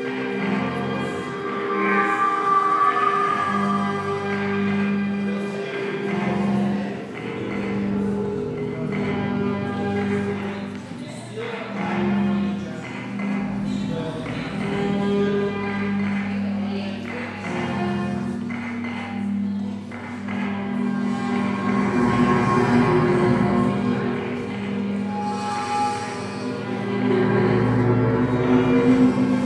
Prag, Tschechische Republik, Sokolska - musique concréte
what was to hear in the yard of sokolska28 at this very evening
Nové Město, Praha-Praha, Czech Republic, 30 April